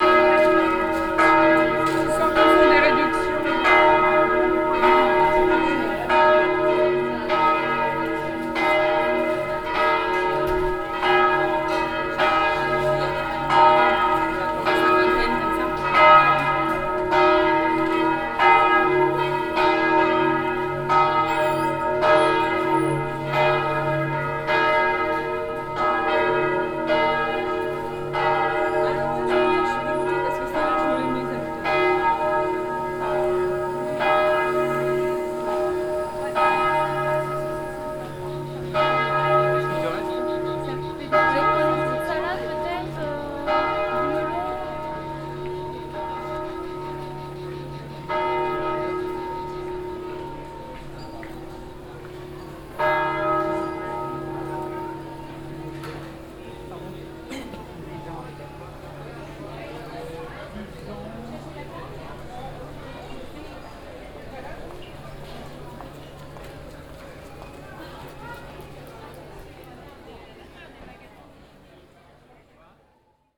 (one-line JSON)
{"title": "l'isle sur la sorgue, rue de la republic, church bells", "date": "2011-08-23 10:35:00", "description": "At the the weekly sunday market in one of the small alleys of the village. The noon church bells of the old cathedral.\ninternational village scapes - topographic field recordings and social ambiences", "latitude": "43.92", "longitude": "5.05", "altitude": "65", "timezone": "Europe/Paris"}